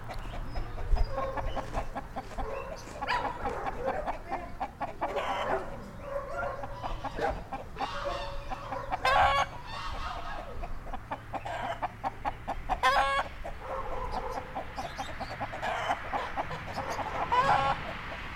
Osek u Teplic, Česká republika - nadražní zuková krajina
domy u dolního nádraží s drůbeží